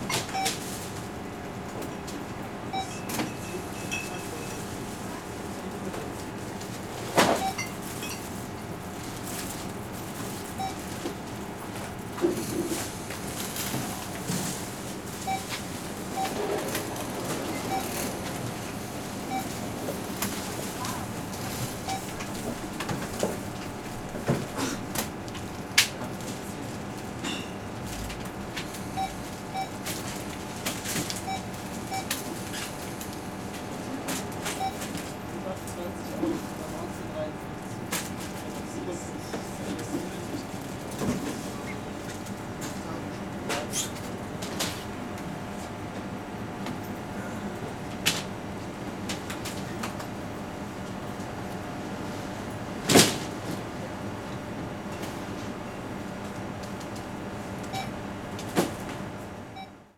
evening, small supermarket, entrance area
Cologne, Germany, December 2010